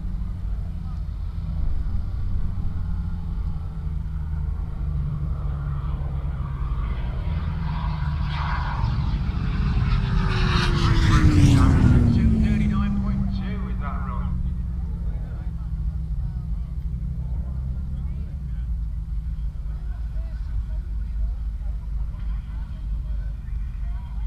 Glenshire, York, UK - Motorcycle Wheelie World Championship 2018 ...
Motorcycle Wheelie World Championship 2018 ... Elvington ... Standing start 1 mile ... open lavalier mics clipped to sandwich box ... very blustery conditions ... positioned just back of the timing line finish ... all sorts of background noise ...
18 August 2018, 14:00